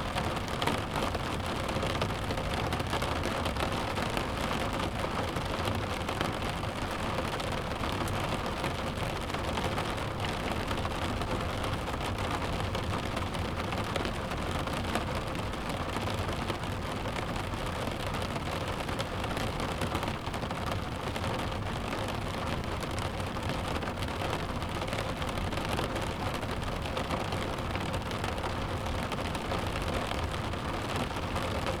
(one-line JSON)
{"title": "Praia do Pisão - Santa Cruz - Heavy rain at the beach", "date": "2020-12-16 09:00:00", "description": "Heavy rain recorded inside the car parked close the beach.\nRecorded with a Tascam DR-40X internal mics on AB.", "latitude": "39.14", "longitude": "-9.38", "altitude": "30", "timezone": "Europe/Lisbon"}